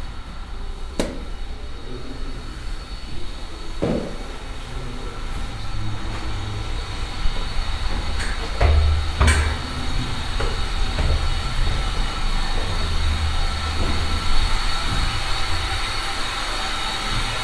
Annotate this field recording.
During the Occupy Brussels movement, this building was a camp provided by the city. Abandoned Universsity, this is a walk in the library, and a water leak.